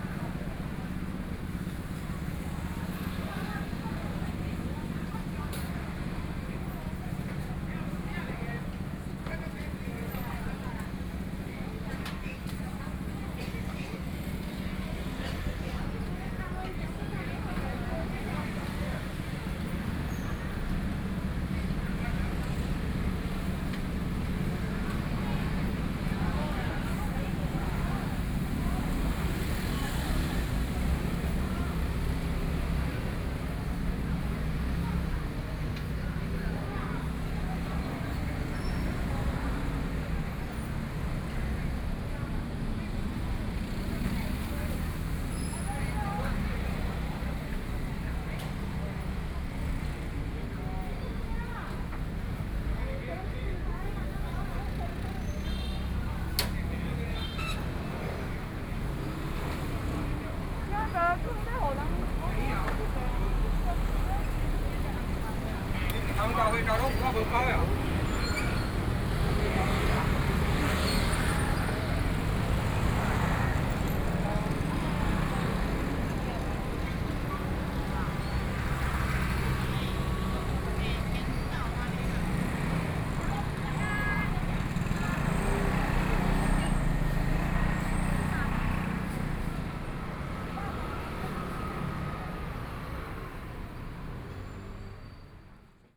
Erling Rd., Xiaogang Dist. - Traditional Market
In the corner, Traffic Sound, Cries of street vendors, Traditional Market